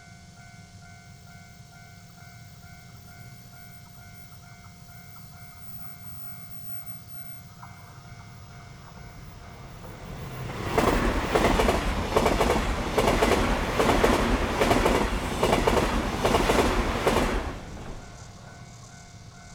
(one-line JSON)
{"title": "新中北路249巷18號, Zhongli Dist., Taoyuan City - Railway level crossing", "date": "2017-07-28 06:29:00", "description": "Railway level crossing, Next to the tracks, Cicada cry, Traffic sound, The train runs through\nZoom H6 +Rode NT4", "latitude": "24.96", "longitude": "121.24", "altitude": "133", "timezone": "Asia/Taipei"}